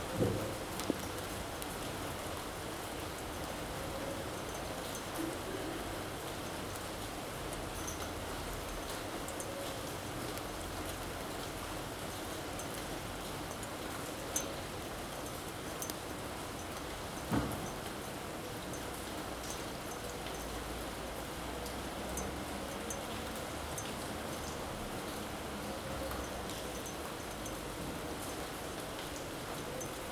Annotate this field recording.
Rain field recording made from a window during the COVID-19 lockdown.